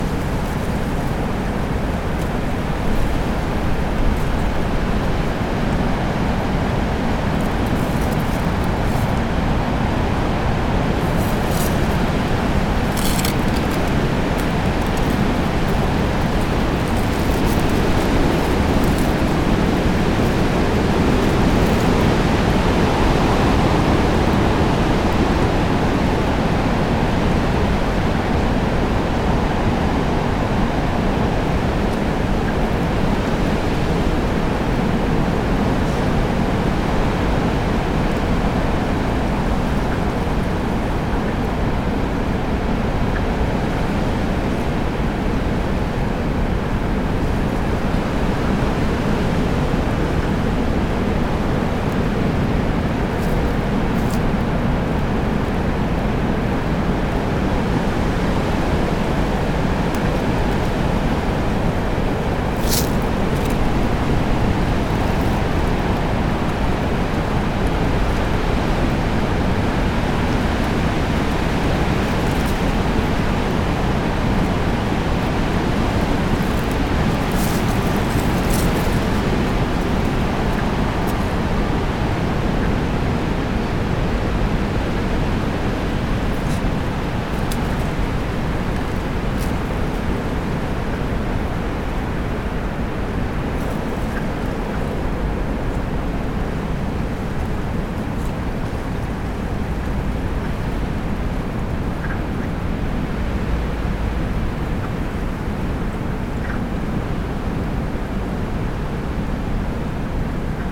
I went out to find frogs but it was a little too cold (47ºF) and way too windy so I recorded the sound of wind in the leafless trees, with a few wood frogs in the background. You can also hear Rhoda the puppy scrabbling in the leaves. There is a little wind noise on the microphone but not a lot considering. Recorded with Olympus LS-10 and LOM mikroUši pair with windbubbles